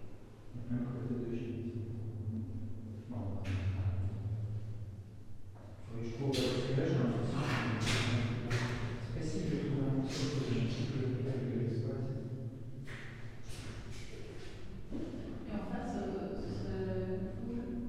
Exploring a very deep tunnel in the Ellergrund mine. We are in the called green ore layer. It's the deeper layer of the mine, which counts 8 levels : the green, the black, the brown, the grey, the red, the wild red, the yellow and the wild yellow. Unfortunately for us, as it's very deep, there's a lot of water. We are trying to cross a flooded district.
Esch-sur-Alzette, Luxembourg, April 2017